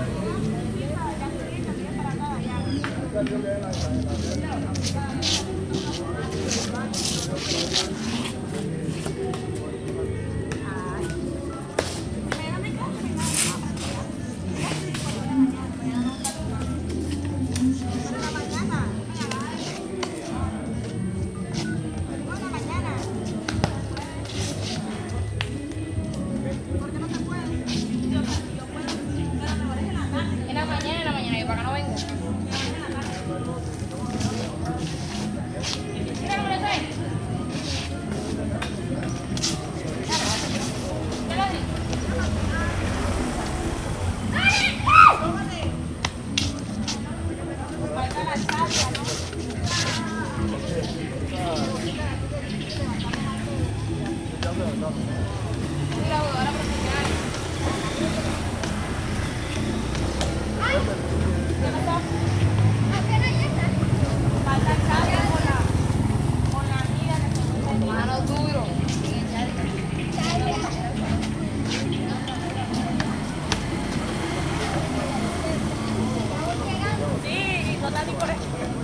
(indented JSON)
{
  "title": "Cancha de basquet, Mompós, Bolívar, Colombia - Mujeres y balón",
  "date": "2022-05-02 03:49:00",
  "description": "Un grupo de adolescentes se prepara para jugar fútbol en una cancha de cemento junto al río Magdalena",
  "latitude": "9.23",
  "longitude": "-74.42",
  "altitude": "12",
  "timezone": "America/Bogota"
}